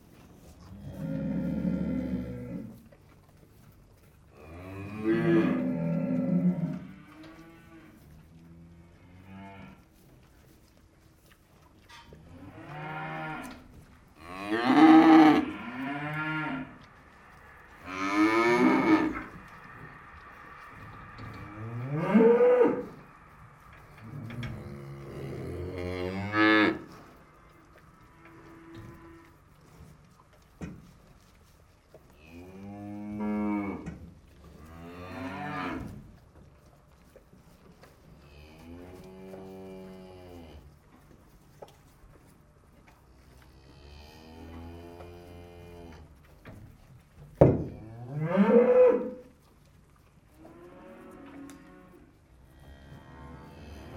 Veals are separated from cows. This makes a mega cow crisis. A veal is crying so much that it losts voice. This makes a monstruous bear sound, grouar ! Thanks to Didier Ryckbosch welcoming me in the farm.